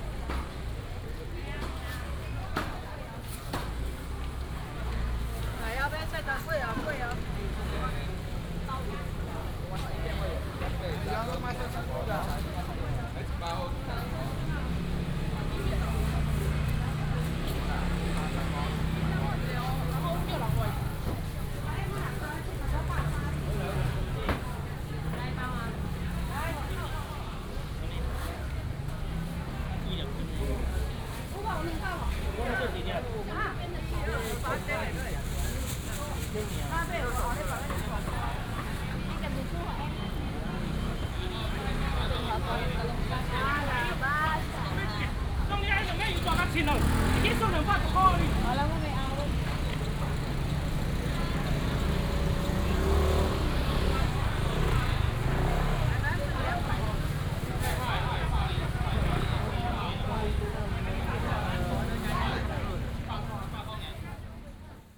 Ln., Sanmin Rd., Changhua City - in the traditional market
Walking in the traditional market